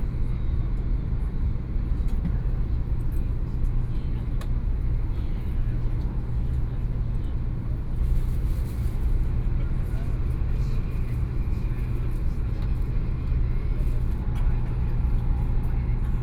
Taiwan High Speed Rail - In the compartment
Taiwan High Speed Rail, In the compartment, Sony PCM D50 + Soundman OKM II
Taipei City, Taiwan, 26 July